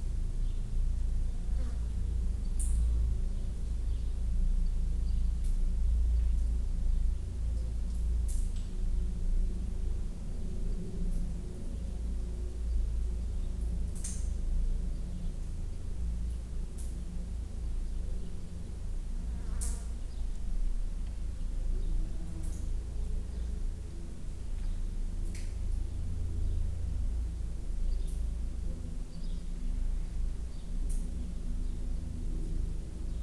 Tempelhofer Feld, Berlin, Deutschland - within deep manhole
havn't recognized this about 4 to 5 meter deep manhole before. standing wave of low frequency inside, and some dripping water. will reviste when outside sounds are present, which will probably influence the resonance inside. hot and quiet saturday morning.
Sony PCM D50, DPA4060)
Berlin, Germany, 27 July 2013